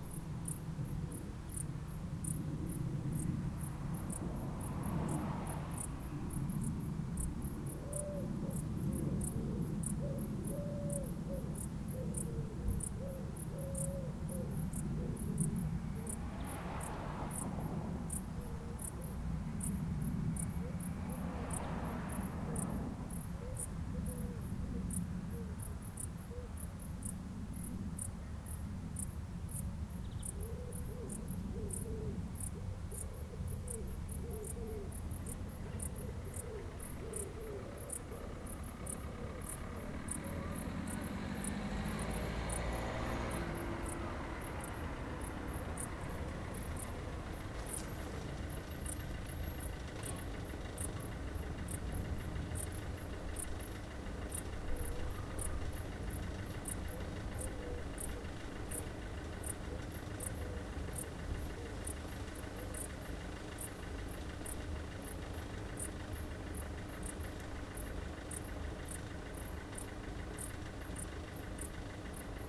Colchester, Colchester, Essex, UK - Crickets in the Bushes
Sounds of crickets, cars, this was made on route to a site of interest I wanted to record.